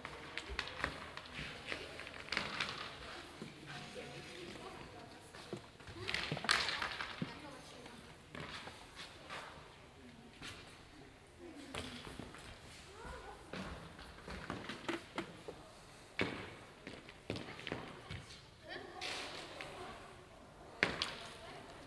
monheim, falkenstrasse, sandberhalle, inline skater hockey training
inline skater hockey training, morgens
soundmap nrw:
social ambiences, topographic field recordings
falkenstrasse, sandberghalle